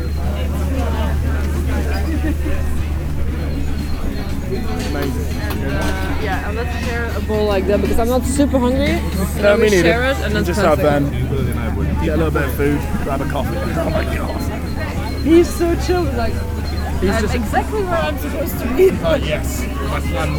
Food Market, Victoria Park, London, UK - Market
The crowd at an ethnic food market on a sunny Sunday.
MixPre 6 II with 2 x Sennheiser MKH 8020s in a rucksack.
England, United Kingdom, 5 March, 13:09